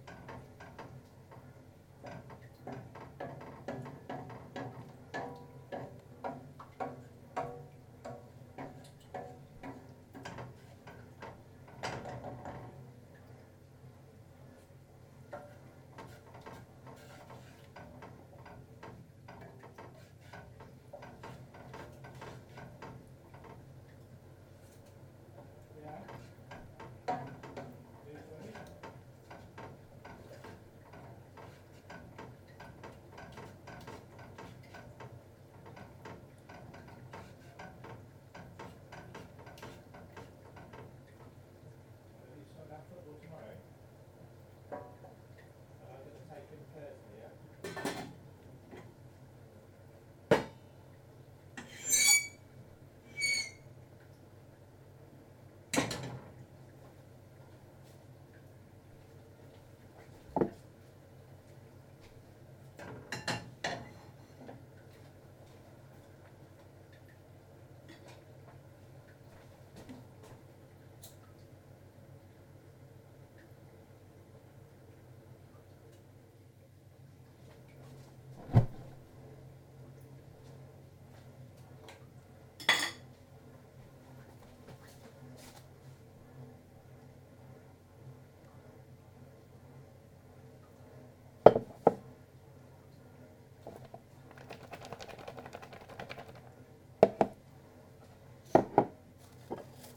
My kitchen, Reading, UK - Making dinner - Turkey Curry
I was thinking all day yesterday about everyday sounds, and had been too much on my computer all day long. To distance myself from the screen, I decided to take pleasure in making the dinner (as I often do). Standing in the kitchen I wondered how many countless times have I listened to this combination of Mark and his children chatting in the other rooms, the noise of the dishwasher, and all of the little culinary noises which result from preparing our food. This is the soundscape of my home. There is no sound I like better, the moment my key is in the door and I hear the familiar warm, woody acoustics of this place, I feel safe and happy and loved. This the soundtrack of a totally normal, completely uneventful Monday night making a curry. It is the most mundane and precious collection of little sounds I can imagine - the sound of the compost bin as I clack it open with my foot, the lovely round dings the saucepans that we bought a few years ago make when I stir in them.